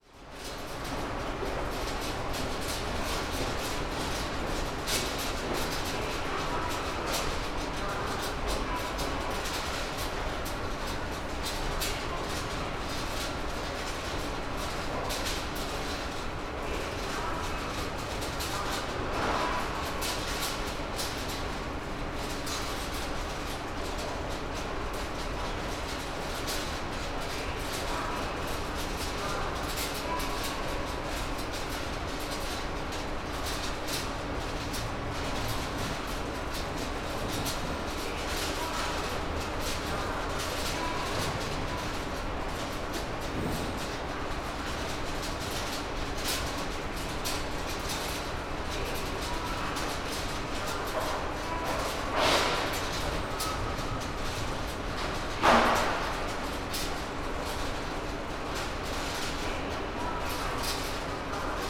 Tokio, Shibuya District, Kitasando subway station - grating rattle
two metal sheet flaps rattling moved by air-conditioning flow at a subway station
北足立郡, 日本